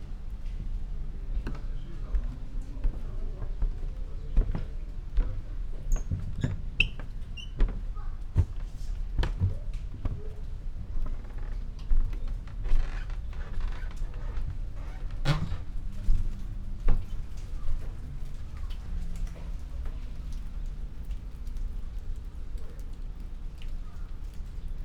garden, Chishakuin temple, Kyoto - walking the wooden floor
gardens sonority
veranda, steps, drops
Kyoto, Kyoto Prefecture, Japan, 1 November 2014, 13:34